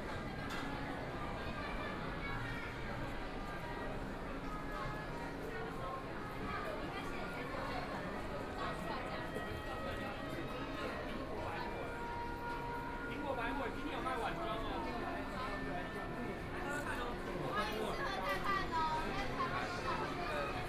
Taipei EXPO Park, Taipei - Walking through the bazaar
Walking through the bazaar, Various shops voices, Binaural recordings, Zoom H4n+ Soundman OKM II
8 February, 15:43